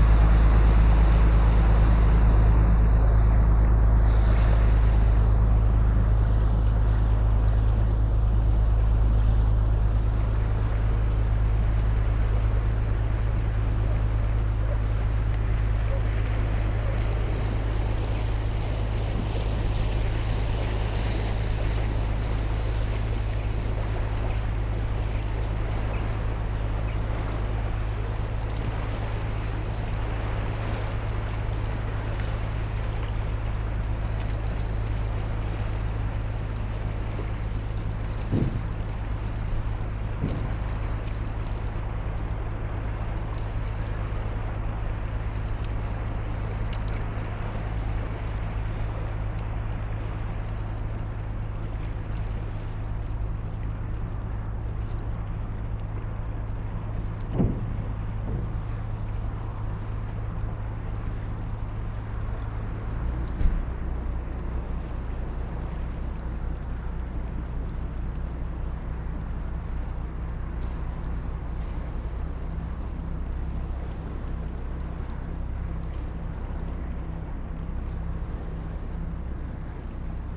Veerweg, Bronkhorst, Netherlands - Bronkhorst Veerpont
Tugboat, Ferry, distant road traffic with siren in distance.
Soundfield Microphone, Stereo decode.